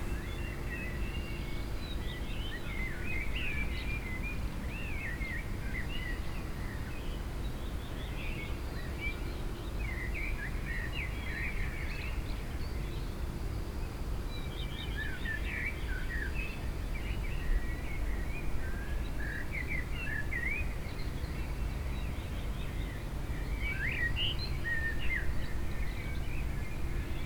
Poznan, balcony - late return
(binaural) early morning ambience. bird chrips looping to the left, echoing off the maze of apartment buildings.
Poznan, Poland, 10 May 2015